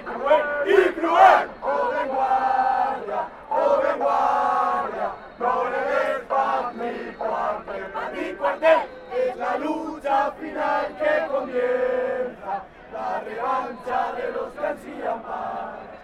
El Pla del Remei, València, Valencia, España - 1 de Mayo
1 de Mayo
Valencia, Spain, 1 May 2015, 14:16